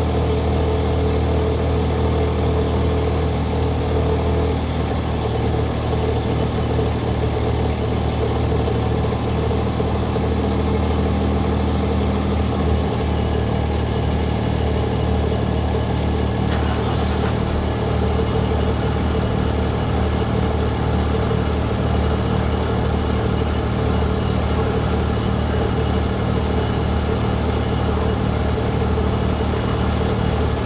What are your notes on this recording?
Cooling unit of a refrigerated lorry being unloaded. A daily nuisance here. Noise and diesel fumes for 45 minutes each time. Roland Wearpro mics and MOTU traveler MK3 audio interface.